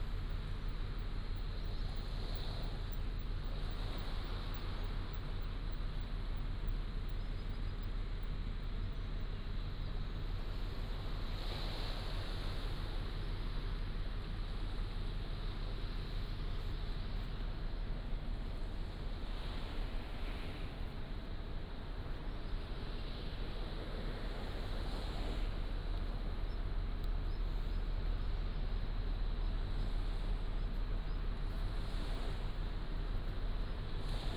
清水溼地, Nangan Township - Standing on the bridge
Standing on the bridge, Sound tide